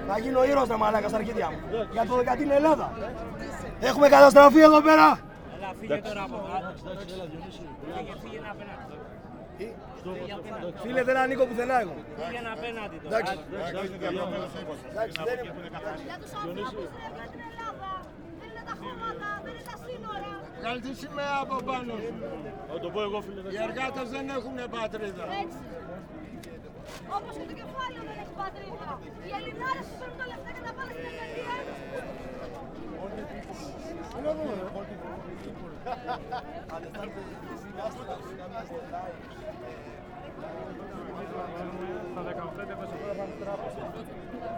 Athens. Protesters taking nationalists to task - 06.05.2010
Περιφέρεια Αττικής, Ελλάδα, European Union